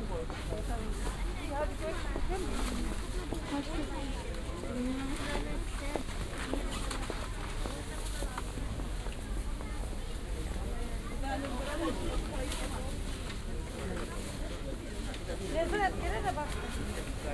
Hamburg Große Bergsstr. - fruit & veg. store, plastic bags
Altona, turkish fruits and vegetables store, outside market, sound of little plastic bags